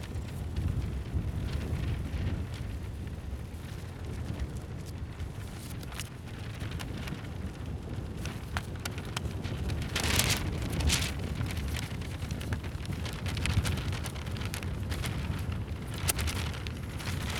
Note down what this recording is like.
a short solo for a magazine fluttering in the wind. manipulating the position, grip as well as folds of the pages in order to obtain various flapping sounds.